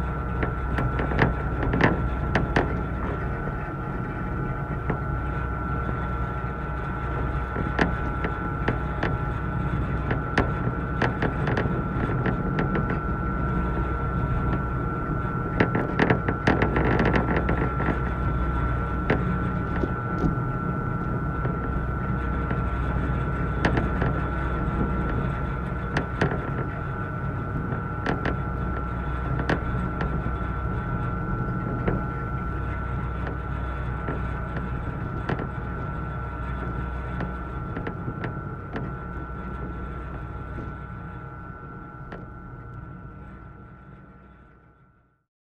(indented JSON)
{
  "title": "Brooklyn, NY, USA - Aboard the NYC Ferry",
  "date": "2019-07-12 14:48:00",
  "description": "Aboard the NYC Ferry, with a contact mic attached to a metal cable.",
  "latitude": "40.60",
  "longitude": "-74.04",
  "timezone": "America/New_York"
}